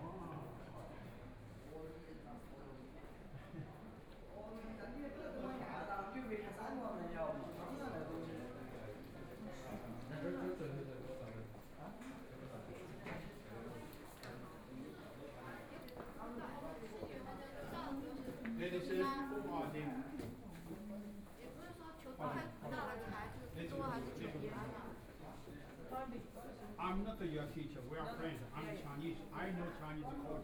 Town God's Temple, Shanghai - in the Temple
walking through tthe Temple, Binaural recording, Zoom H6+ Soundman OKM II